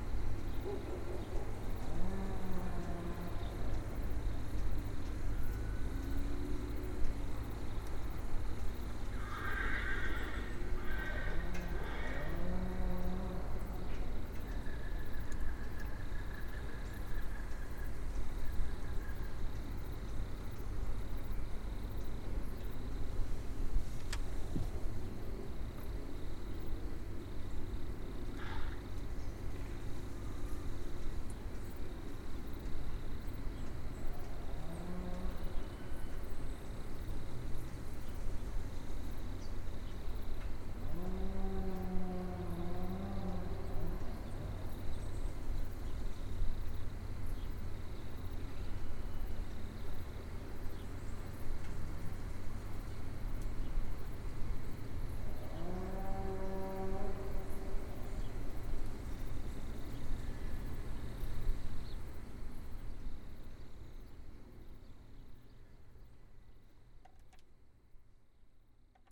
Chevaline, France - Paysage de Chevaline
Depuis la cabane n°3 Pré du trot au dessus de Chevaline. Festival des cabanes 2022. Interprétation improvisée en tant que xylophone. Non comprise dans ce son.
France métropolitaine, France, 16 August 2022